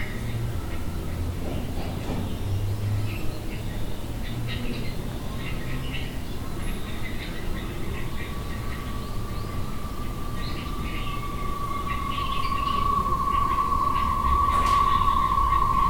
Jardin des Plantes, Caen, France - Here and there at the same place.
Inside the botanical garden, trying to be alone, recorded with the eyes closed.